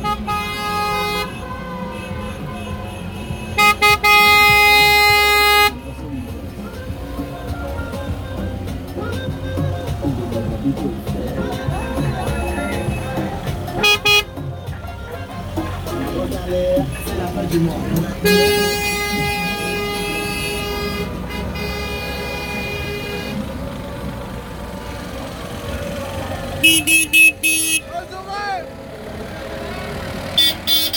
Rue des Platanes, Réunion - 20200624 21H corteges electoral CILAOS
Cortège electoral CILAOS